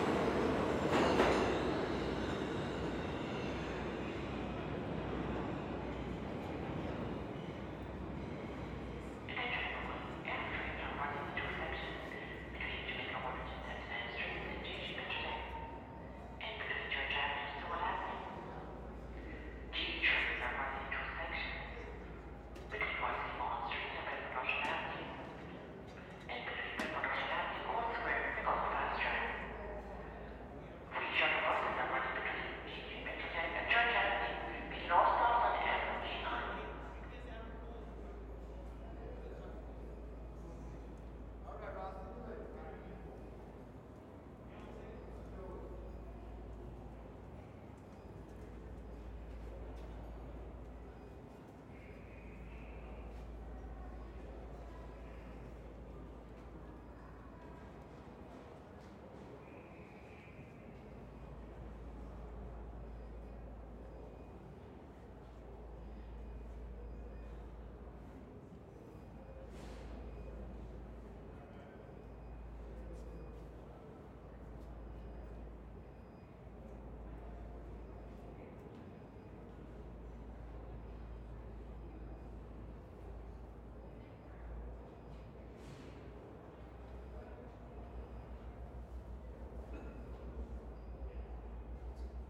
Willoughby St, Brooklyn, NY, USA - Jay Street–MetroTech Station at Night
Jay Street–MetroTech Station.
Late-night commuters, and train announcements.
United States, February 2022